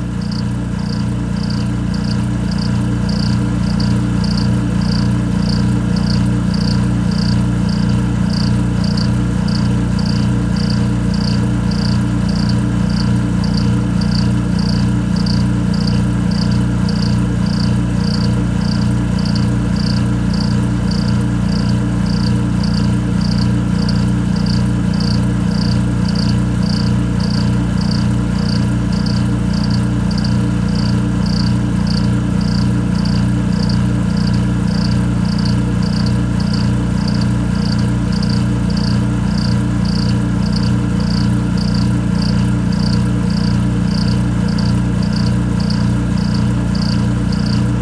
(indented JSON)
{
  "title": "Up close and personal with The Cricket Machine, Houston, Texas - air compressor at sally's",
  "date": "2012-09-16 19:30:00",
  "description": "This is the air compressor which can be heard in the background in \"Rain, Cicadas and the Cricket Machine\". It drives an aerator in the lake, to keep it from getting stagnant. Although it's annoying that it runs out in the open for all to hear, 24/7; I liked it more and more as I continued to listen.\nCA-14 omnis > DR100 MK2",
  "latitude": "29.76",
  "longitude": "-95.61",
  "altitude": "31",
  "timezone": "America/Chicago"
}